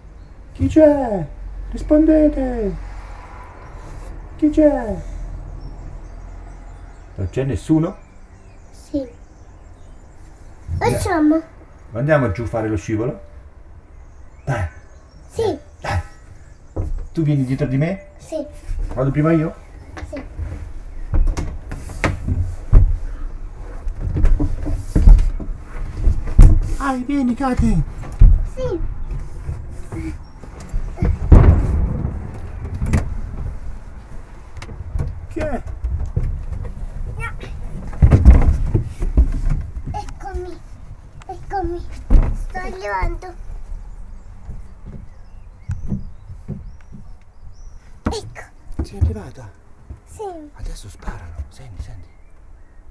in the tunnel with Caterina